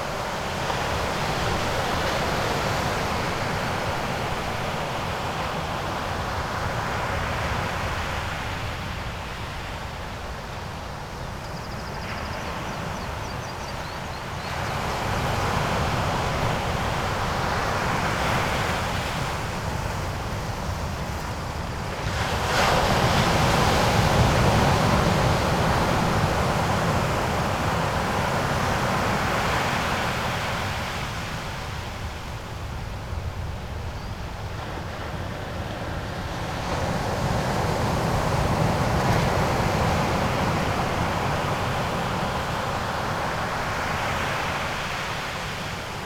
Madeira, Porto da Cruz - white caps
waves pulsing at the rocky beach of Porto da Cruz.
Portugal